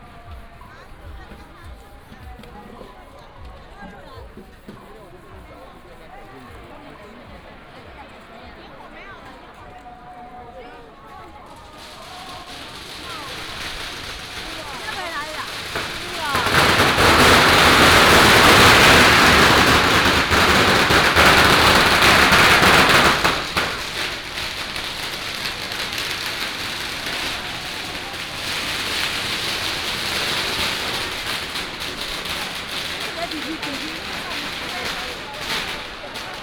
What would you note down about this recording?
Traditional temple fair, Fireworks and firecrackers sound